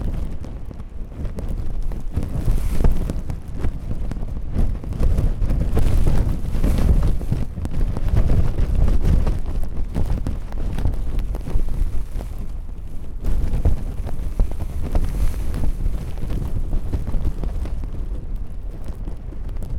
Microphone in the beach bin with strong wind.Rue Hippolyte Durand, Saint-Nazaire, France - Microphone in the beach bin, Saint-Nazaire
recorded with Zoom H4